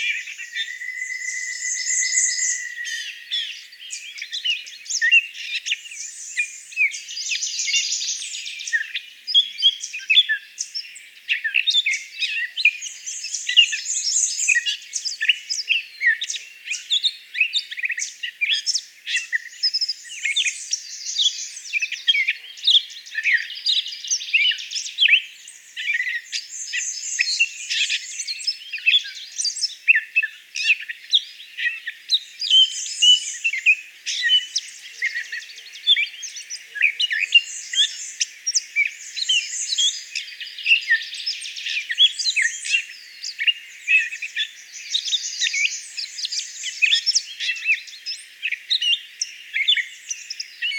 Lazy Creek
Morning Soundscape of Song Birds at mouth of creek